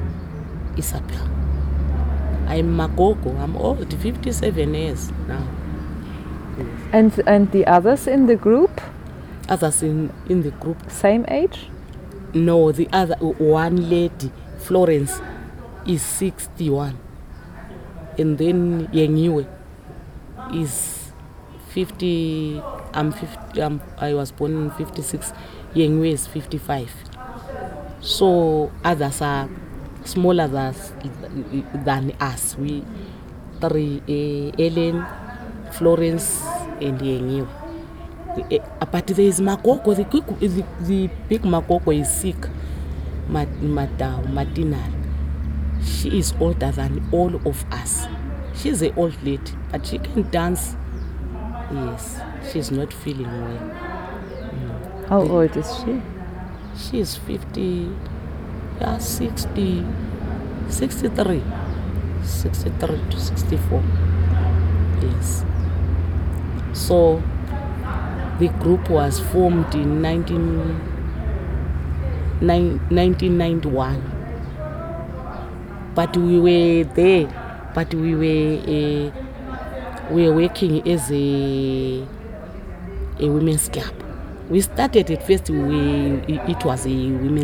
outside Thandanani's rehearsal room, Matshobana, Bulawayo, Zimbabwe - We are here...
An interview with Ellen Mlangeni, the leader of Thandanani followed under a tree outside their rehearsal room (voices from the other women inside the room are in the background). Ellen tells the story how the group formed and recounts the history of their recordings and successes (you’ll hear the drone of the nearby road into town; and, unfortunately, the midday breeze in my mic in the second track of the interview…)
You can find the entire list of recordings from that day archived here:
30 October 2013, 12:10